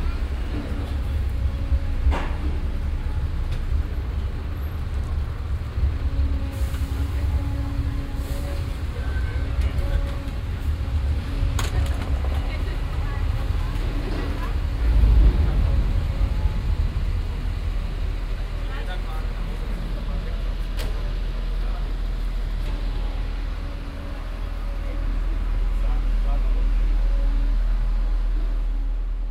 cologne, south, chlodwigplatz, baustelle &martinshorn - cologne, south, chlodwigplatz, baustelle & martinshorn
soundmap: cologne/ nrw
grossbaustelle chlodwigplatz nachmittags
project: social ambiences/ listen to the people - in & outdoor nearfield recordings